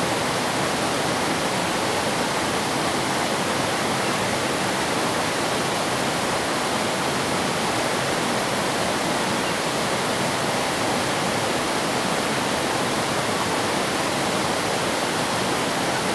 灰瑤子溪, Tamsui Dist., New Taipei City - Stream
Stream, Bird sounds
Zoom H2n MS+XY
Tamsui District, New Taipei City, Taiwan, April 2016